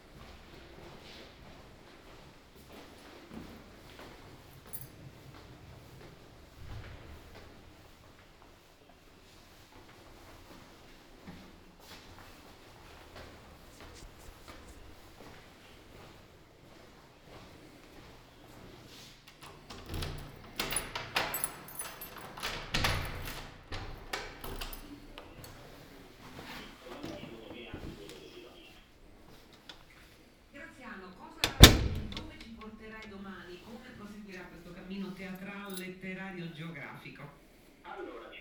"It’s five o’clock with bells on Tuesday in the time of COVID19" Soundwalk
Chapter XLV of Ascolto il tuo cuore, città. I listen to your heart, city
Tuesday April 14th 2020. San Salvario district Turin, walking to Corso Vittorio Emanuele II and back, thirty five days after emergency disposition due to the epidemic of COVID19.
Start at 4:51 p.m. end at 5:18 p.m. duration of recording 27’02”
The entire path is associated with a synchronized GPS track recorded in the (kmz, kml, gpx) files downloadable here:
Piemonte, Italia, 14 April